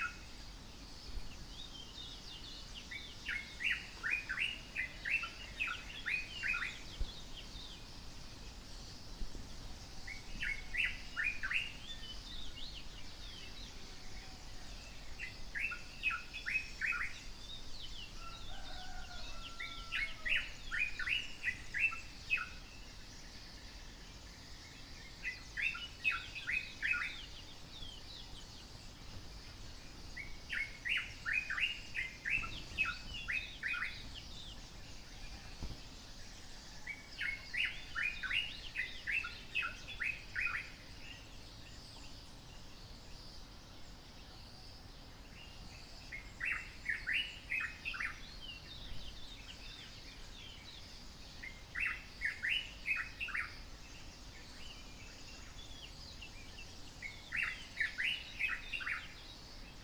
Maweni Farm, Soni, nr Lushoto, Tanzania - inhabited landscape 1